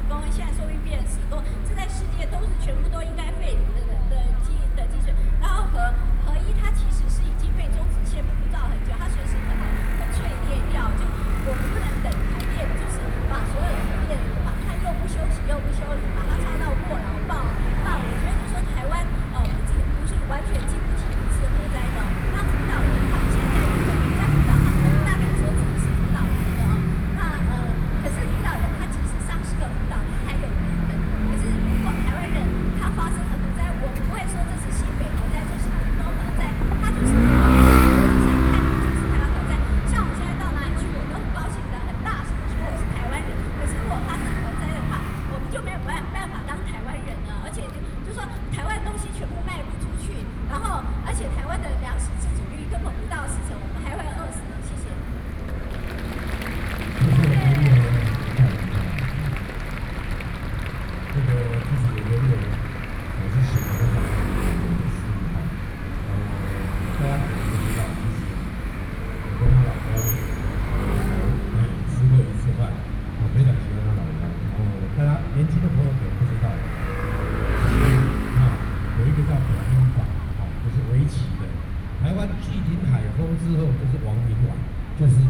Freedom Plaza, Taipei City - Opposition to nuclear power
Famous writer, speech, Opposition to nuclear power
Binaural recordings
Taipei City, Taiwan, 9 August